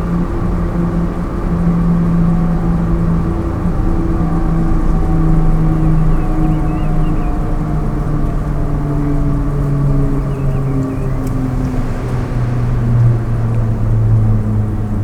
tondatei.de: pfänderbahn, ankommende gondel
Österreich, European Union